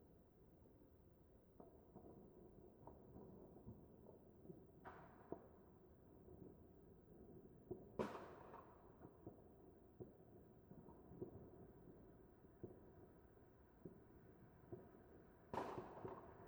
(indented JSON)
{
  "title": "Rijeka, Croatia, Happy New Recordings - Happy New Recordings 2017",
  "date": "2016-12-31 23:50:00",
  "description": "Happy new year, and have everything you wish !!!",
  "latitude": "45.33",
  "longitude": "14.42",
  "altitude": "7",
  "timezone": "GMT+1"
}